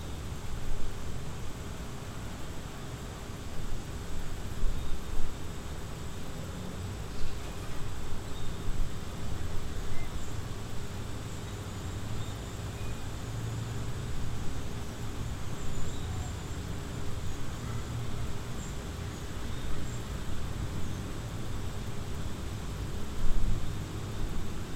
Cra., Medellín, Belén, Medellín, Antioquia, Colombia - inocencia fantasma
Este parque infantil se encuentra solo porque recién llovíó alrededor de las 5:45. DE allí se puede
deslumbrar la soledad que representa la ausencia de las inocentes almas de los niños jugando y
disfrutando de sus jóvenes vidas